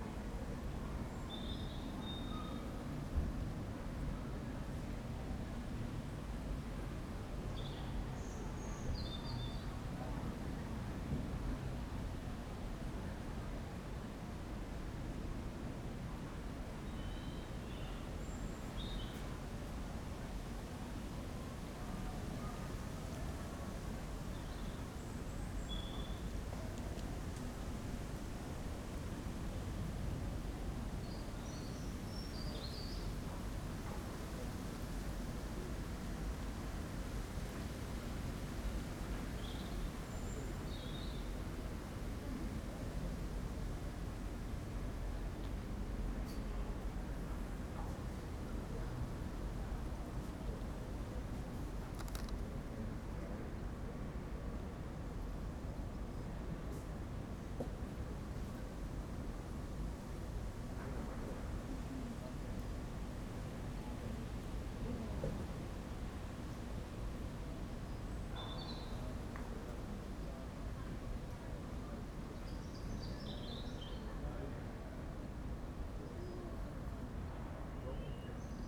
St. Mary Abbots Gardens, Drayson Mews, Kensington, London, UK - St. Mary Abbots Gardens school playground
Lunch in the park next to a school playground